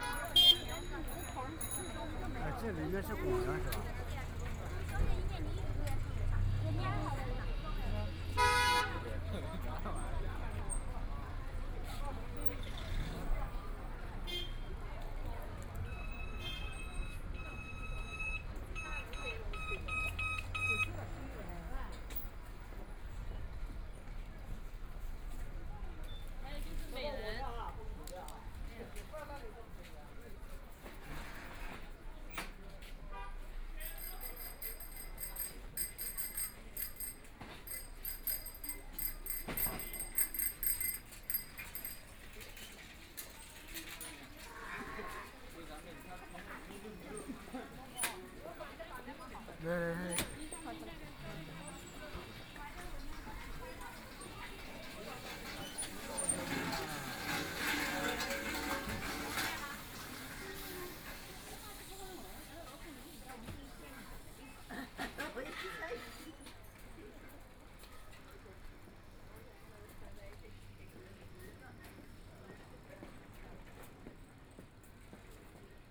Anren Street, Shanghai - soundwalk
Walking inside the old neighborhoods, Binaural recording, Zoom H6+ Soundman OKM II
Shanghai, China, 25 November 2013, ~15:00